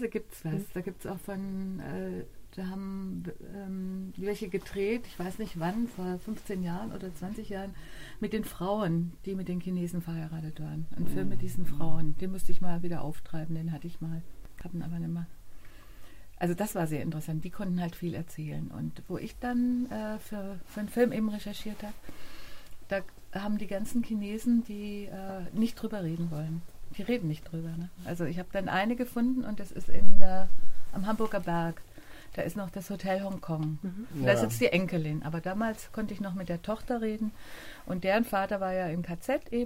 Harrys Hamburger Hafenbasar
Aus der Serie "Immobilien & Verbrechen". Die geheimen Kellersysteme von St. Pauli und ihre Erfinderinnen.
Keywords: Gentrifizierung, St. Pauli, Chinatown, Hafenstraße, NoBNQ - Kein Bernhard Nocht Quartier